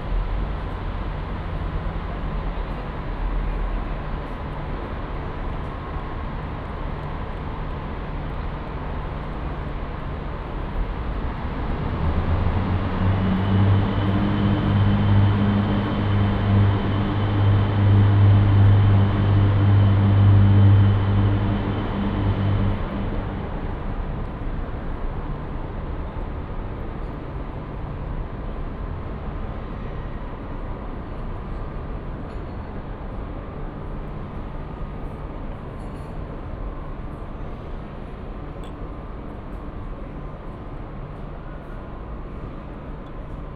Ruzafa, Valencia, Valencia, España - Tren Diesel
Tren Diesel en estación de Valencia. Luhd binaural